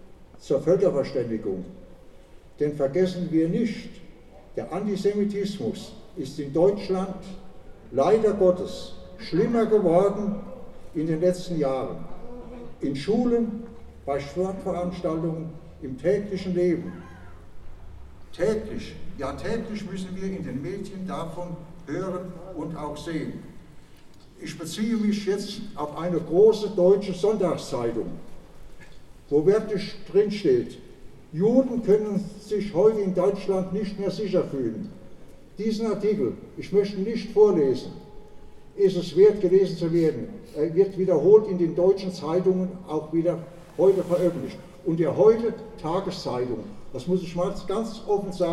Brass band and speeches in front of the former synagoge to commemorate the progrom in 1938 that expelled the jews from the small town Bad Orb, this year with a reflection on the World War One. Part two.
Recorded with DR-44WL.